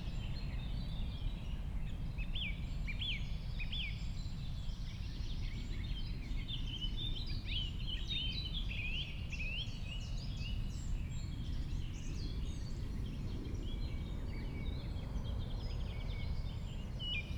Wahner Heide, Köln, Deutschland - forest ambience /w birds and aircraft
Köln, nature reserve Wahner Heide / Königsforst, very close to the airport, forest and heathland spring ambience, an aircraft descends
(Sony PCM D50, DPA4060)